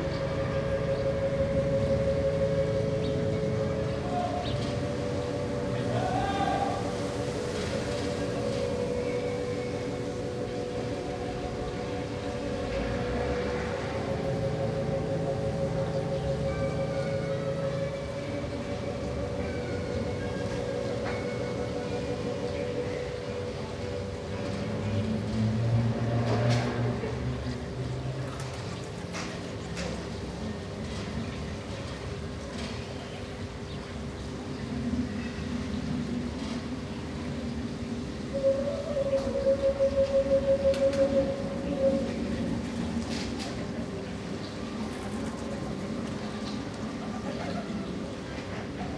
Saint-Paul-Trois-Châteaux, France - Neighbour with two chords
Neighbour playing organ, trucks, children, birds.
Sony MS microphone. DAT recorder.